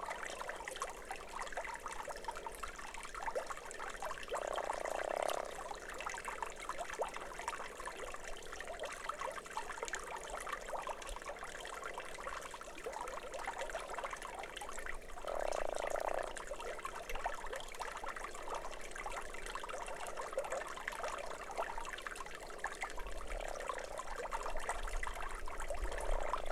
{"title": "Lithuania, Stabulankiai, first heard frog - first heard frog", "date": "2012-04-11 15:30:00", "description": "the very first croaking frog I've heard this spring", "latitude": "55.51", "longitude": "25.45", "altitude": "162", "timezone": "Europe/Vilnius"}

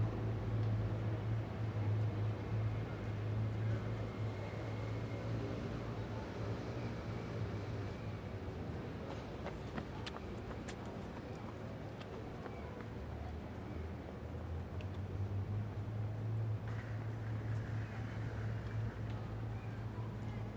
{"title": "Quai de Valmy, Paris, France - AMB PARIS CANAL ST MARTIN MS SCHOEPS MATRICED", "date": "2022-02-22 12:45:00", "description": "This is a recording of the Quai de Valmy near to the Canal St Martin in Paris. I used Schoeps MS microphones (CMC5 - MK4 - MK8) and a Sound Devices Mixpre6.", "latitude": "48.87", "longitude": "2.36", "altitude": "46", "timezone": "Europe/Paris"}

